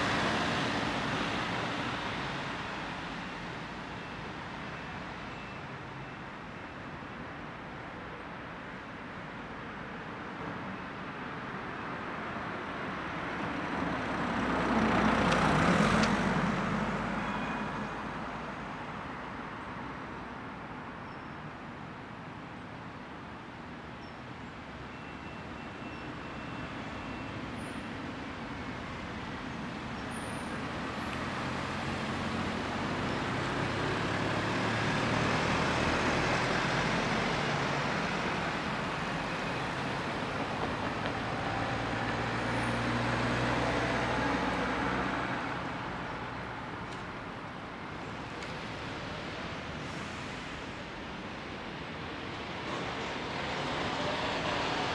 Morning ambience, sunny day.
Tech Note : Sony PCM-D100 internal microphones, XY position.
Région de Bruxelles-Capitale - Brussels Hoofdstedelijk Gewest, België / Belgique / Belgien, 11 August